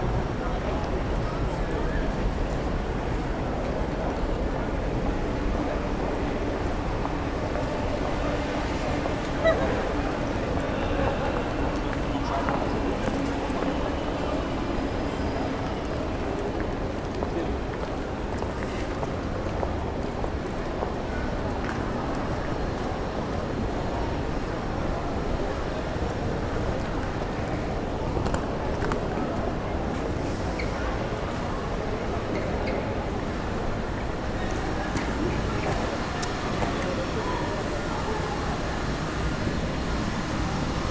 basel SBB station
recorded june 8, 2008. - project: "hasenbrot - a private sound diary"